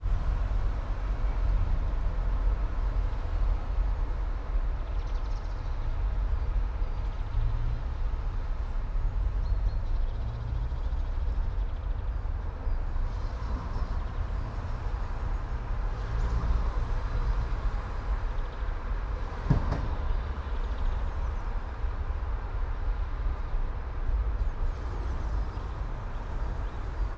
all the mornings of the ... - jan 30 2013 wed
Maribor, Slovenia, 30 January 2013